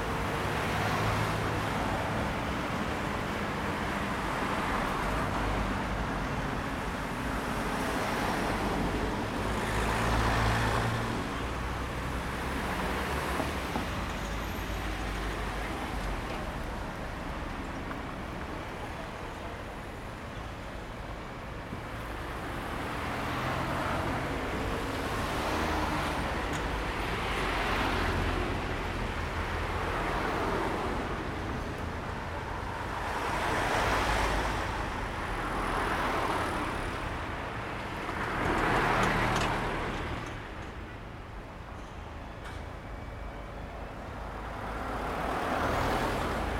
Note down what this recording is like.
One of the busiest intersections in Sopot. You can hear the street crossing melody for the blind. Recorded with Zoom H2N.